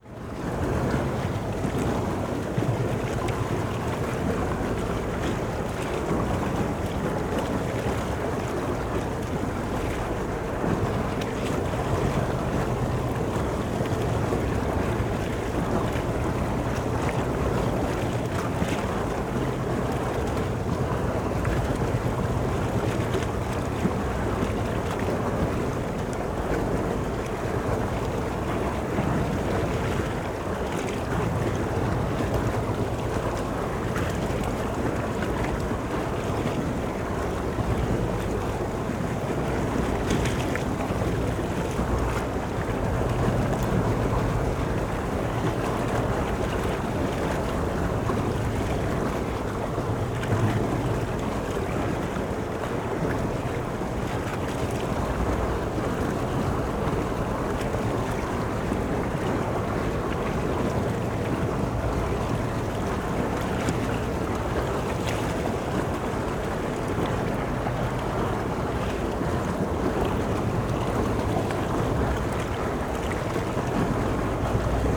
metal tube, sewage water inflow
(SD702, AT BP4025)